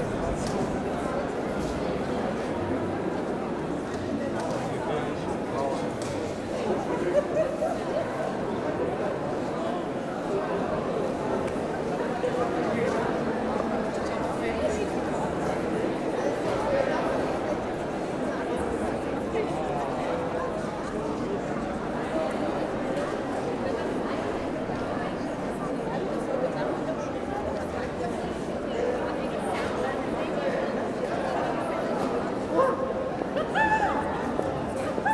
berlin, zossener straße: heilig-kreuz-kirche - the city, the country & me: holy cross church
inside the holy cross church during the carnival of cultures
the city, the country & me: june 12, 2011
Berlin, Germany, 12 June 2011